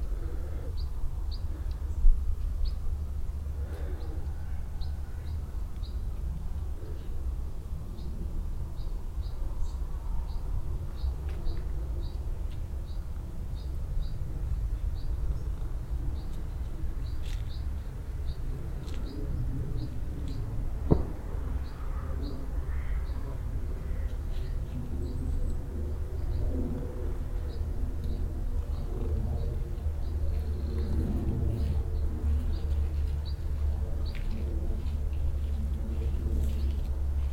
In the garden area of a farm house on an early summer morning. The sound of a garden shower and the sound of several group of shots in the distance.
topographic field recordings - international ambiences and scapes